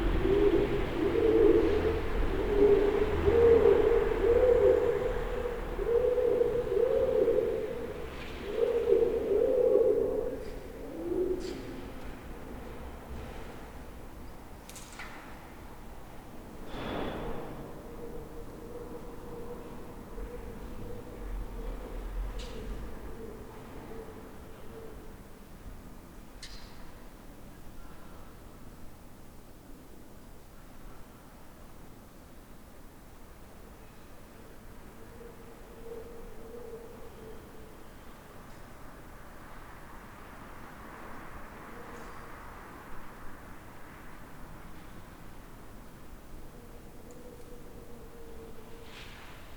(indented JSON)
{
  "title": "Siilotie, Oulu, Finland - Pigeons inside a defunct workshop",
  "date": "2020-05-03 17:38:00",
  "description": "Pigeons calling and flying inside a defunct workshop. You can also hear cars driving by and people speaking outside the workshop. Recorded with Zoom H5 with default X/Y capsule, noise removed in post.",
  "latitude": "65.04",
  "longitude": "25.43",
  "altitude": "5",
  "timezone": "Europe/Helsinki"
}